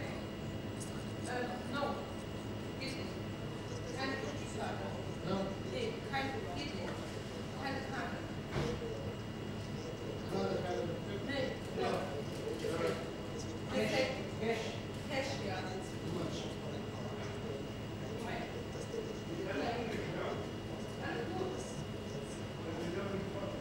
Schellerten, Deutschland - Raststätte Hildesheimer Börde
Raststätte Hildesheimer Börde an der Autobahn A7. Fieldrecording. Mitte April 2016. Abends, etwa um 19:00h. Wolkenloser Himmel, fließender Verkehr, wenig Besucheraufkommen. Position im Eingangsbereich. Gerätschaften der Systemgastronomie. Reinigungsarbeiten.